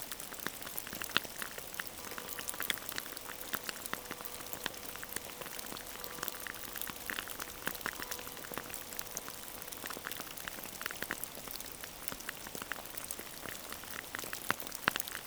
Saint-Martin-de-Nigelles, France - Rain
Near the church, rain is falling on gravels. The sad story is that the church is closed since a long time as it's collapsing inside. Fleeting, a sound of the town hall bell.
9 August 2017, 16:00